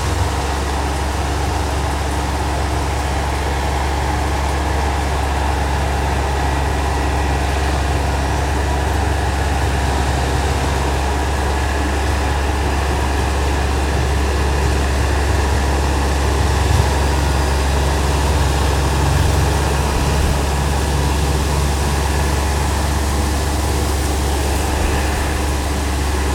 Saint-Pierre-la-Bourlhonne, Chez Lemaitre, La grande faucheuse
France, 16 August